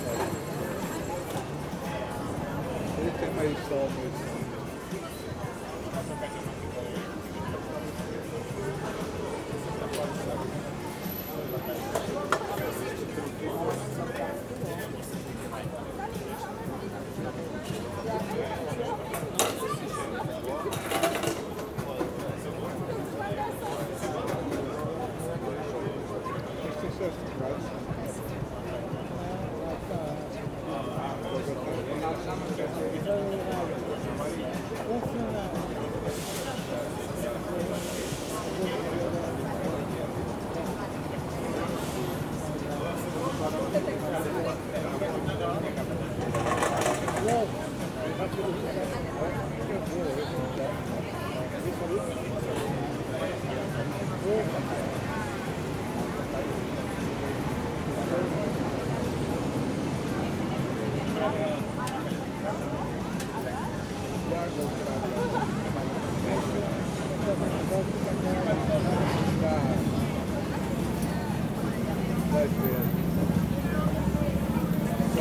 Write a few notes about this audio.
Having a drink in Bairro Alto, Lisbon. Recorded with Zoom H6.